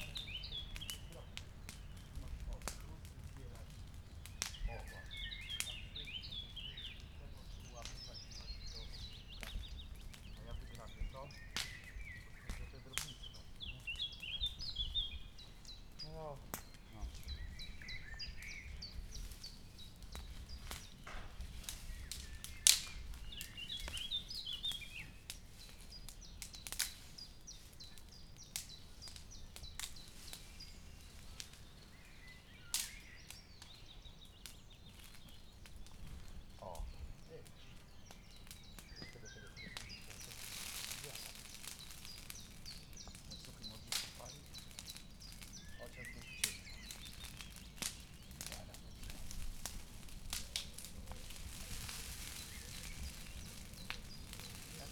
Zielonka, Malinowa Road - burning old branches and leaves
man and his son burning branches and leaves. (sony d50)
Poland